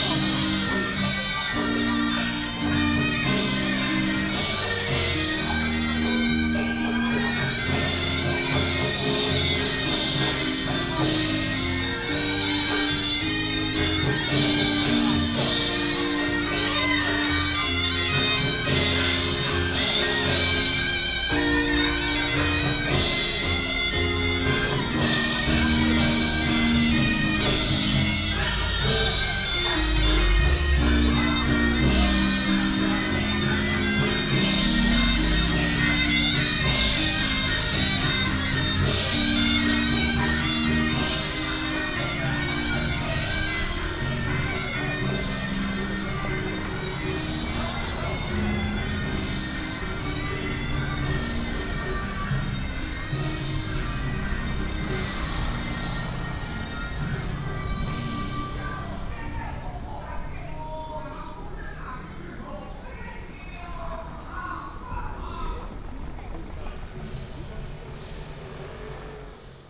Walking in the area of the temple, Taiwanese traditional opera, Traffic sound, sound of birds
9 April 2017, 17:31